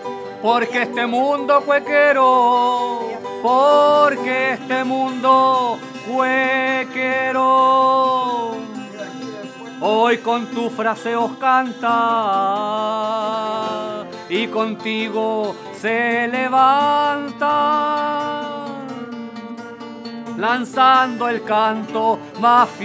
Subida Ecuador, Valparaiso, Chile - Singing during a funeral, during wake of Jorge Montiel (Valparaiso, Chile)
During the wake of the death Jorge Montiel, Manuel Sánchez Payador sing to his memory, improvising in "décimas".
Voices of the people around in background.
Recorded in Valparaiso, Chile, during a residency at Festival Tsonami 2015.
Recorded by a MS Setup Schoeps CCM41+CCM8
In a Cinela Leonard Windscreen
Sound Devices 302 Mixer and Zoom H1 Recorder
Sound Reference: 151121ZOOM0015